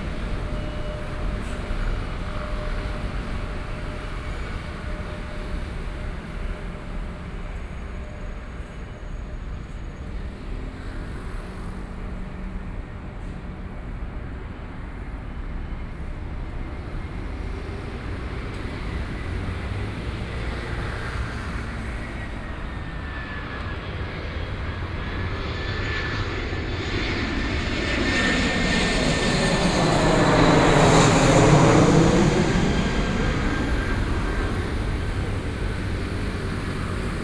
中山區圓山里, Taipei City - walking on the Road
walking on the Road, Traffic Sound, Aircraft flying through
Zhongshan District, 台北市立美術館, May 2014